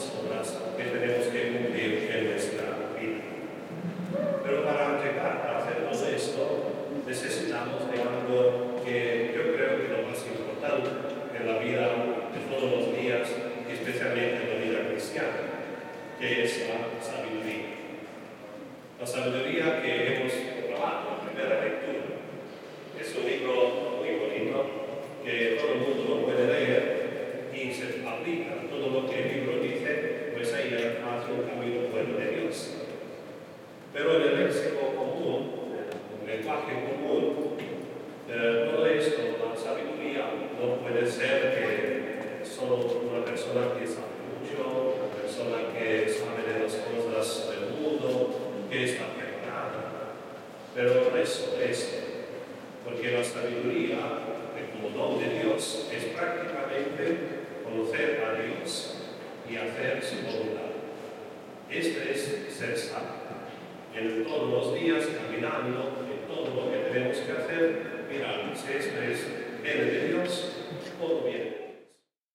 Catalpa Ave, Ridgewood, NY, USA - St. Matthias Church in Ridgewood, NY
Sunday Mass at St. Matthias Church in Ridgewood, NY.
Zoom h6
United States of America, 8 November 2020, ~12:00